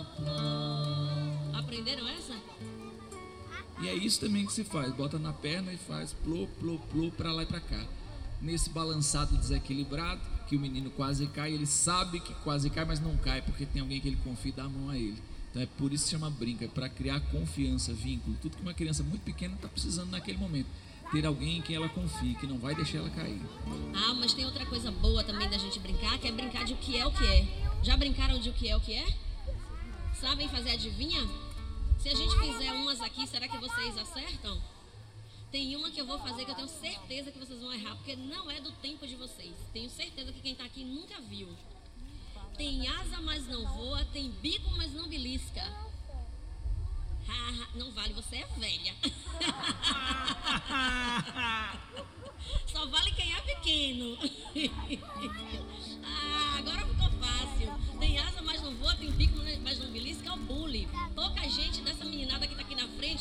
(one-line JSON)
{"title": "São Félix, BA, Brasil - Domingo no Porto", "date": "2014-03-23 18:15:00", "description": "Contos, Cantos e Acalantos: Apresentação da Canastra Real no Projeto Ourua (Casa de Barro) no Porto de São Félix. Gravado com Tascam DR 40.\nPaulo Vitor", "latitude": "-12.61", "longitude": "-38.97", "altitude": "6", "timezone": "America/Bahia"}